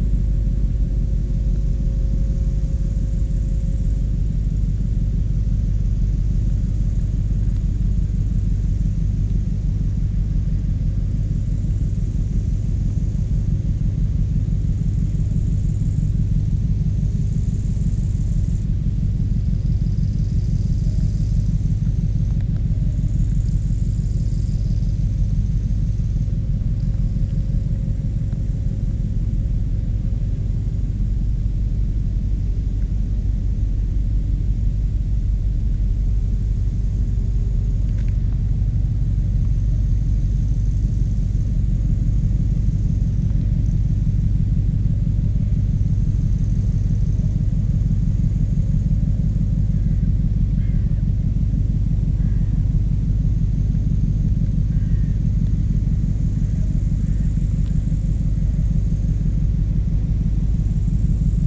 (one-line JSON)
{"title": "Nieuw Namen, Netherlands - Verdronken Land van Saeftinghe", "date": "2018-08-04 11:30:00", "description": "Locust singing on the high grass of the Saeftinghe polder. A big container from Hamburg Süd is passing on the schelde river.", "latitude": "51.35", "longitude": "4.23", "altitude": "7", "timezone": "GMT+1"}